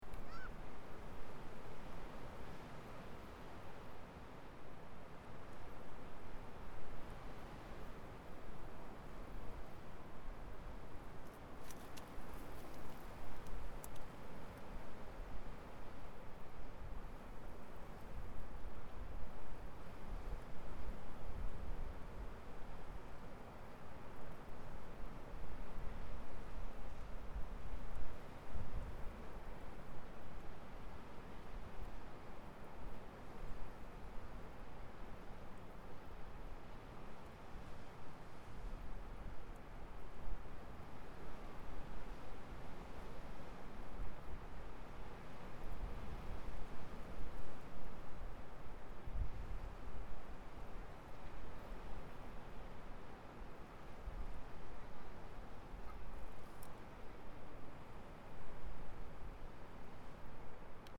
Punta Falcone, Piombino LI, Italy - Waves at Punta Falcone
Waves at Punta Falcone, one of the wildest and most beautifl places in Piombino, Tuscany.
17 March 2018